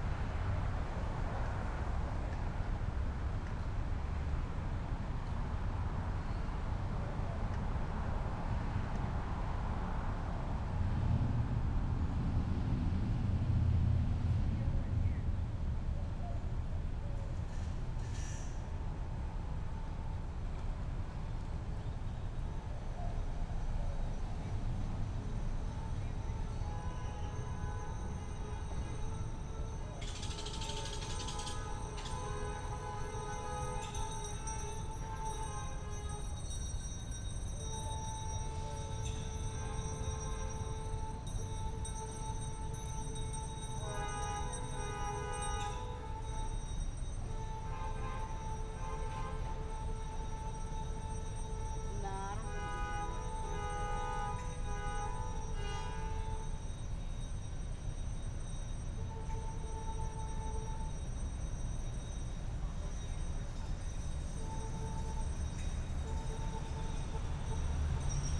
Recorded w/ Sound Devices 633 and LOM Stereo USIs